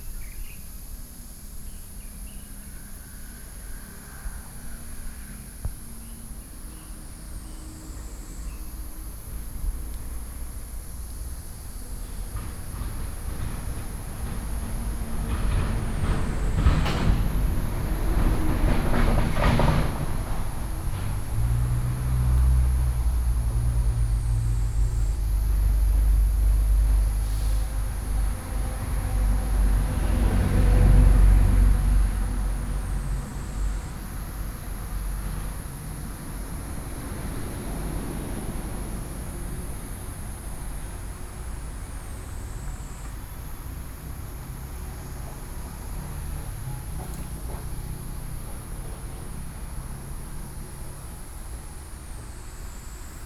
Guishan Station, Yilan County - Outside the station
Outside the station, Birdsong, Very hot weather, Traffic Sound